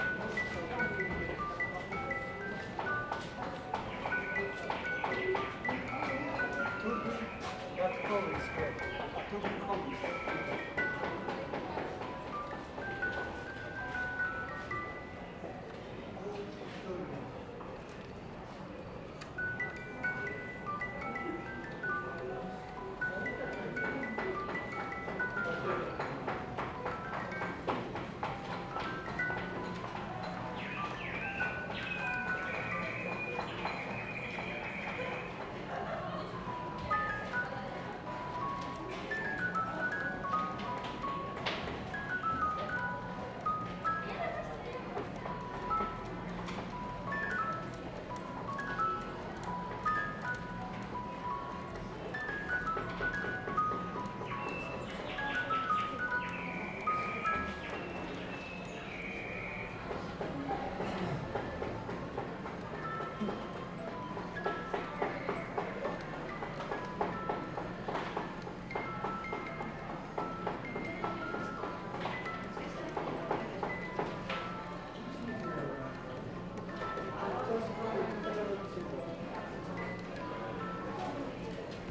Stare Miasto, Kraków, Polska - An offer he can't refuse
Small tune boxes at a Cracow souvenir stand.
Krakow, Poland, 27 June 2014, ~13:00